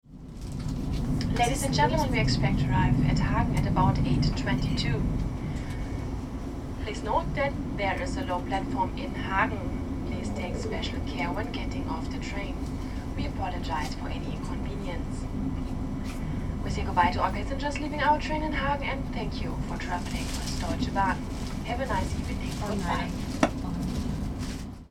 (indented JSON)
{
  "title": "hagen, die unterste Trittstufe - trittstufe 5",
  "date": "2009-02-08 19:25:00",
  "description": "08.02.2009 19:25, ICE Berlin -> Köln",
  "latitude": "51.36",
  "longitude": "7.46",
  "altitude": "108",
  "timezone": "Europe/Berlin"
}